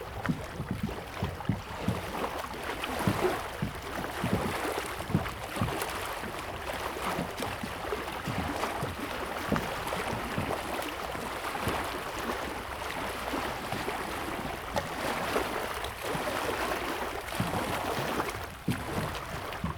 環湖公路10號, Taoyuan City - Lake and ship
Small pier, Lake and ship
Zoom H2nMS+XY